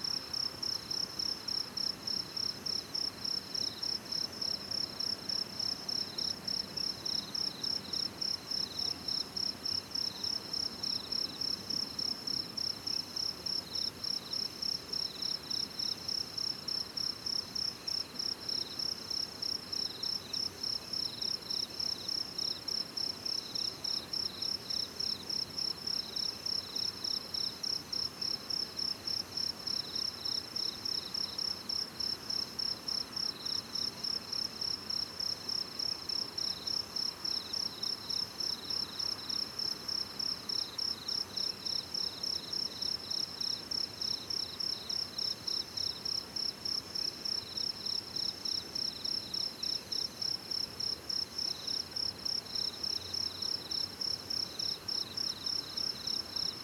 田份橋, 桃米巷, 埔里鎮 - Insects sounds
In the fields, Insects sounds
Zoom H2n MS+XY